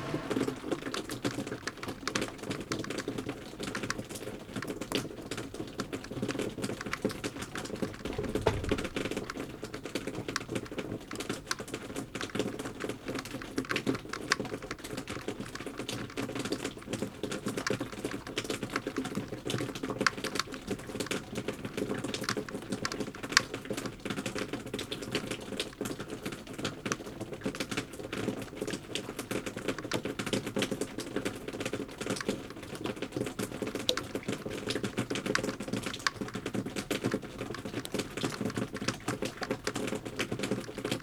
berlin, friedelstraße: hinterhof - the city, the country & me: backyard
melt water dripping from the roof
the city, the country & me: january 28, 2013
28 January 2013, ~02:00